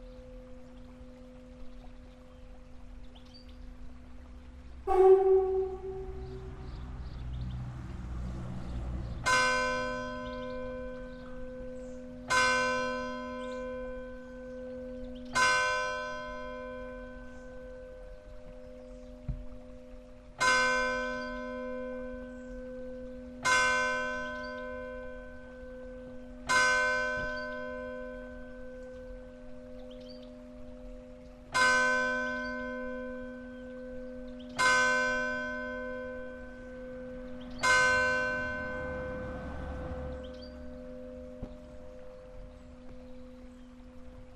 Drée, France - Point d'Ouïe 1
Inauguration du 1er point d'ouïe mondial - Soundwalk - 18/07/2015 - #WLD2015